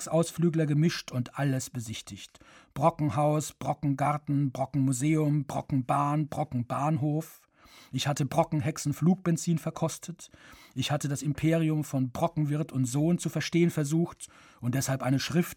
auf dem brocken
Produktion: Deutschlandradio Kultur/Norddeutscher Rundfunk 2009